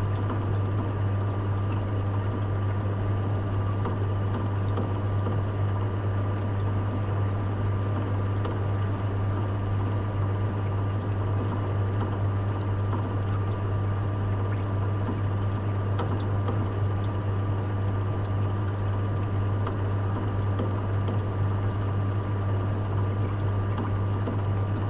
Tehran Province, Tehran, District, No. 1، W Zartosht St, Iran - An air cooler on the rooftop